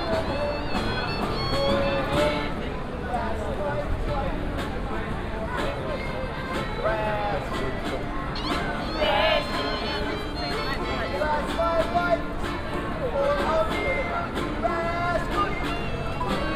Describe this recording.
(binaural), one of many street bands performing around the Trastevere district in the evening, entertaining tourist and locals who crowd the nearby restaurants and cafes at that time of the day.